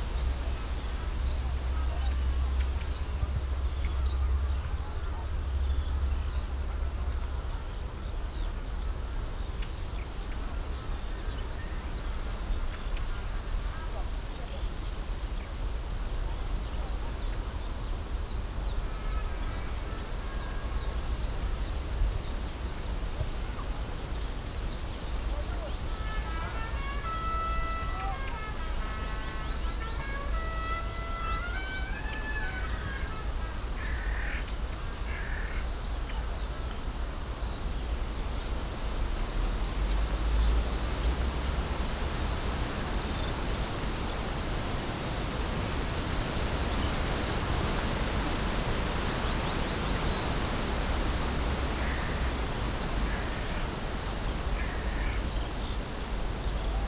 Tempelhofer Feld, Berlin, Deutschland - spring sunday, wind in poplars
easter Sunday late afternoon, nice breeze in my beloved group of poplar trees on former Tempelhof airport.
(Sony PCM D50, DPA4060)
Berlin, Germany, April 2014